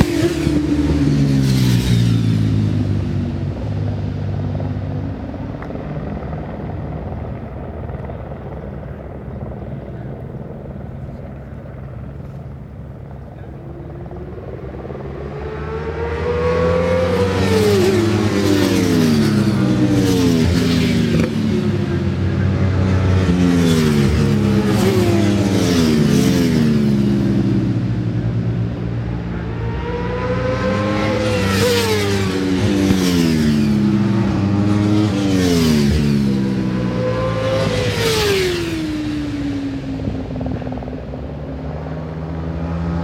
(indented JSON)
{
  "title": "Brands Hatch GP Circuit, West Kingsdown, Longfield, UK - WSB 1998 ... Superbikes ... Qual ...",
  "date": "1998-08-01 11:00:00",
  "description": "World Superbikes 1998 ... Superbikes ... qualifying ... one point stereo mic to minidisk ... the days of Carl Fogarty in his pomp ...",
  "latitude": "51.35",
  "longitude": "0.26",
  "altitude": "151",
  "timezone": "Europe/London"
}